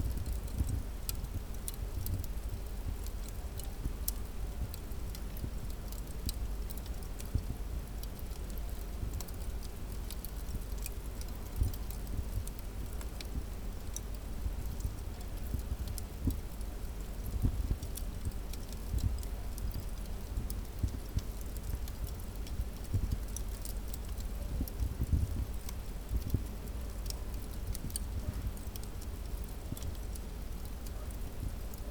Ouzoud, Morocco - Riad des Cascades
Feu de cheminée dans la salle à manger du Riad.